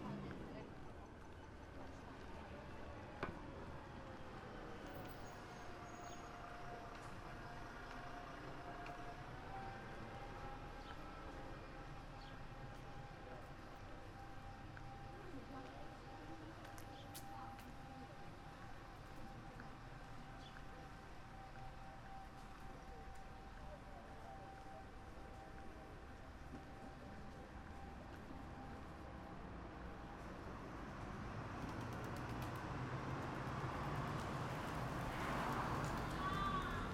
Rijeka, Pigeons Invasion - Rijeka, Pigeon Invasion